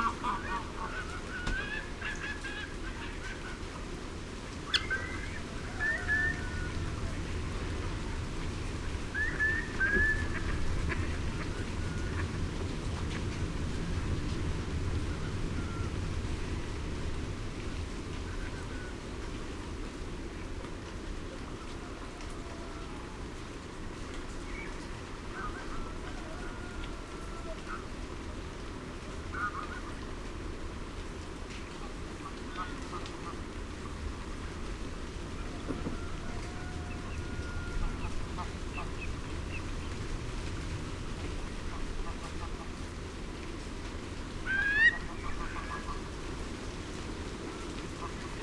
Isle of Mull, UK - wind ... rain ... curlews ... soundscape ...
Wind ... rain ... curlews ... soundscape ... Dervaig lochan ... parabolic on tripod ... bird calls from ... greylag goose ... mallard ... snipe ... greenshank ... redshank ... grey heron ... tawny owl ...
11 September 2007, 05:40